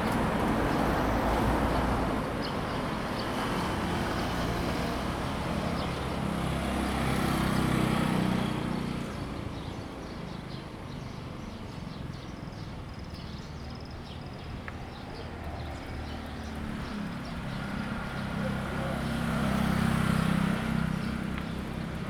文正國小, 雲林縣水林鄉 - At the entrance of the primary school
Small village, At the entrance of the primary school, Traffic sound, Environmental sound
Zoom H2n MS +XY